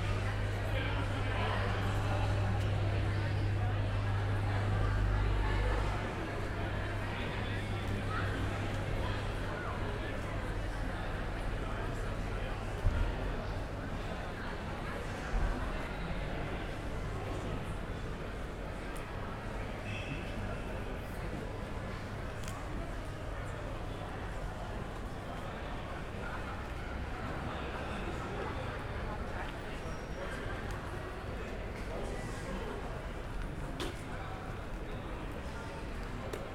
Neustadt-Nord, Cologne, Germany - Belgisches Viertel night walk
night walk around the block, Belgian Quarter / Belgisches Viertel: restaurants closing, people in the street and gathering at Brüsseler Platz, a strange hum, sound of the freight trains passing nearby can be heard everywhere in this part of the city.
(Sony PCM D50, DPA4060)